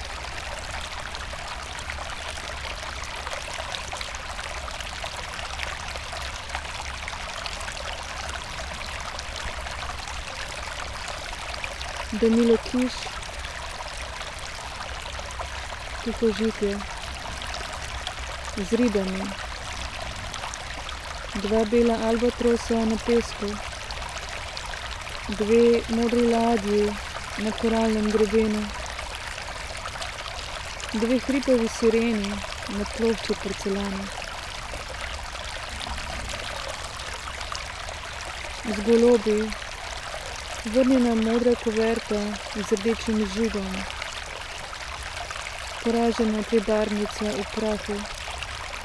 fragment from a reading session, poem Tihožitje (Still life) by Danilo Kiš
Malečnik, Slovenia